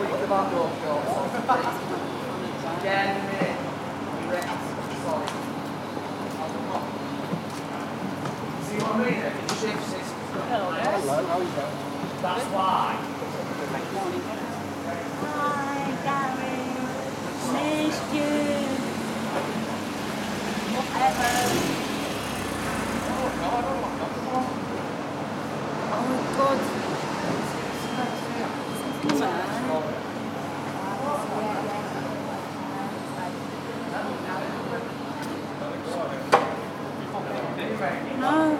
China Town
Gearge Street, men working, cars, people